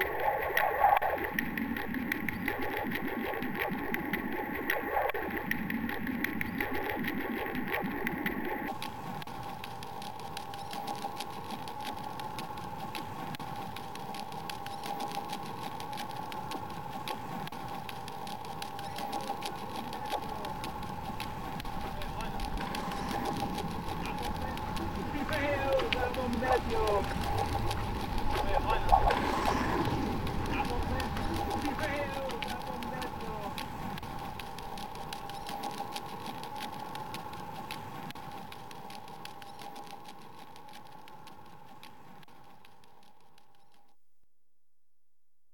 Montreal: Lachine Canal: Charlevoix bridge - Lachine Canal: Charlevoix bridge
Constructed from ambience recorded on the Charlevoix bridge over the canal east of the Atwater market. Car tires against the textured metal surface of the bridge produce this distinctive thrum, which are looped to enhance the existing rhythms of traffic. It was a cold dry day, with ice underfoot on the empty cycle path up to the bridge.